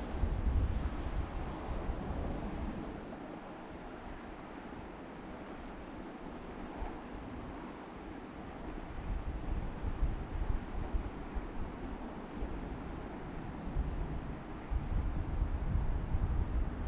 Fort Snelling State Park - MSP 30R Landings From Fort Snelling State Park
Landing aircraft at Minneapolis/St Paul International Airport on Runway 30R recorded from Fort Snelling State Park